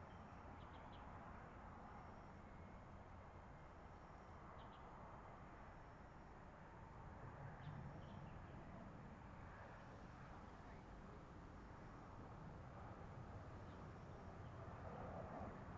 Taitung Forest Park, Taiwan - Fighter flight traveling through
Fighter flight traveling through, The distant sound of traffic, Zoom H6 M/S